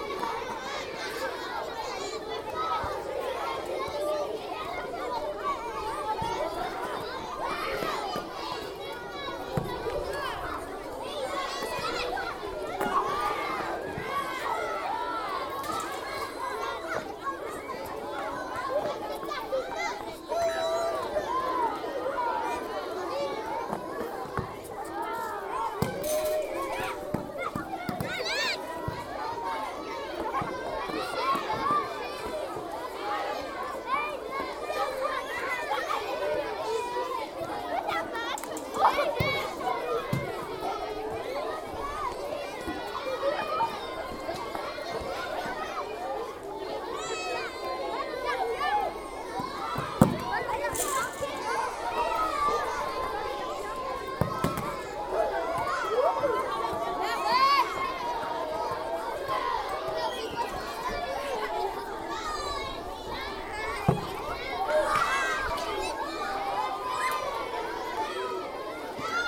Court-St.-Étienne, Belgique - Collège Saint-Etienne schoolyard
Recording of the Collège Saint-Etienne schoolyard on a sunny morning. Initially there's near nothing, just some brief and tenuous rumors. Then, the ringtone is vibrating, the first child arrives in the courtyard. A diffuse sound is gradually increasing, a long time until the last voice.